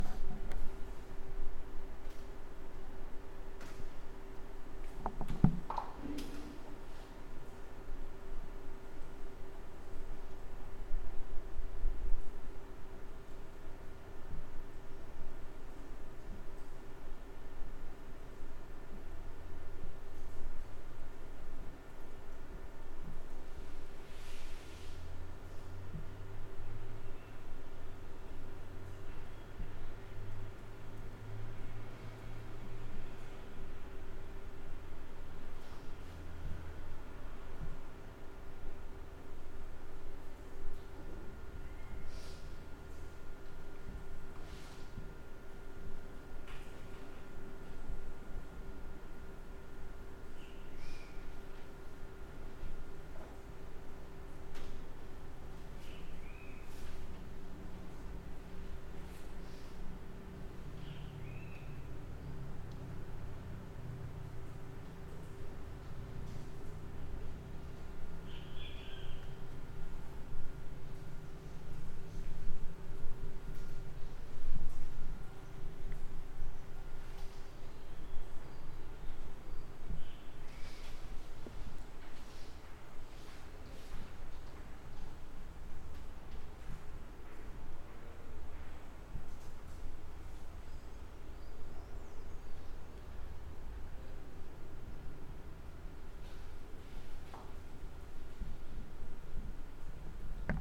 Biblioteca da Universidade Federal do Recôncavo da Bahia- 44380-000 - Biblioteca da UFRB em um sábado
Captação feita com base da disciplina de Som da Docente Marina Mapurunga, professora da Universidade Federal do Recôncavo da Bahia, Campus Centro de Artes Humanidades e Letras. Curso Cinema & Audiovisual. CAPTAÇÃO FOI FEITA COM UM PCM DR 50, na Biblioteca UNIVERSITÁRIA EM CRUZ DAS ALMAS-BAHIA
Bahia, Brazil, 8 March 2014, 11:17